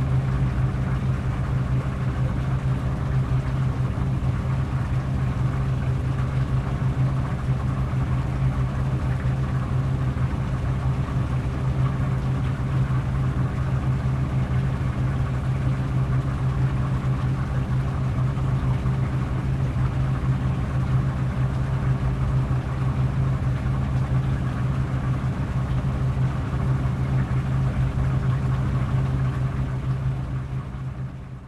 Lithuania, Antaliepte, at hydro power plantat
small hydro power plant, one tube is leaky, so theres a sound of water mixed with roaring of water pump